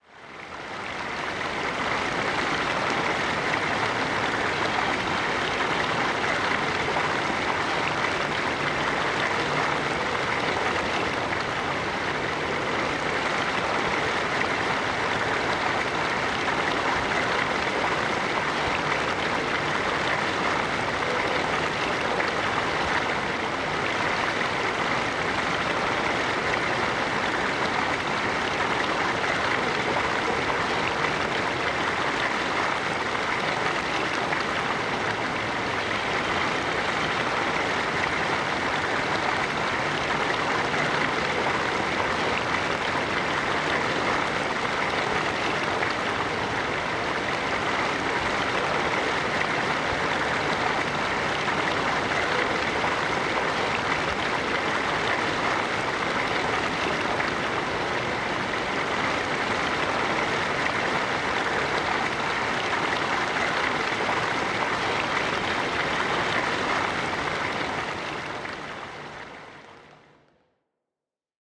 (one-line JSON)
{"title": "Beckler Rd, Skykomish, WA, USA - tiny waterfall Beckler River WA", "date": "2011-07-21 15:20:00", "description": "Unfortunately only the audio from a video recording.", "latitude": "47.73", "longitude": "-121.33", "altitude": "326", "timezone": "America/Los_Angeles"}